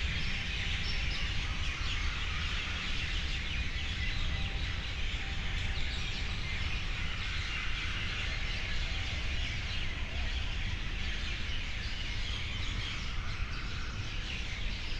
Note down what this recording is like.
06:30 Film and Television Institute, Pune, India - back garden ambience, operating artist: Sukanta Majumdar